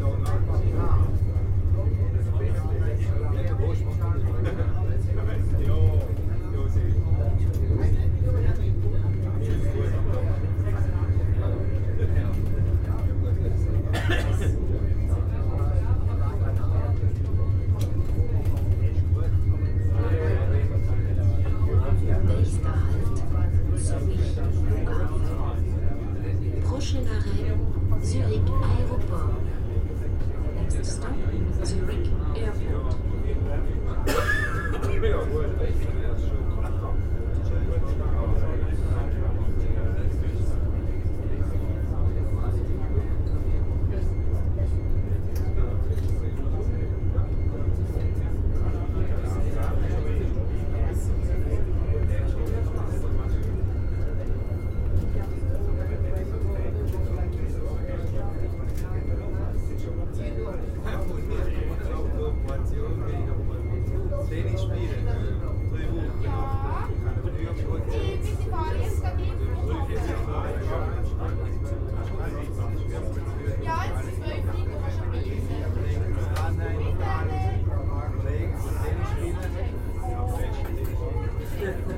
train arriving zurich airport, diner
recorded in restaurant car. background: constant beeping from defective refrigeration. recorded june 8, 2008. - project: "hasenbrot - a private sound diary"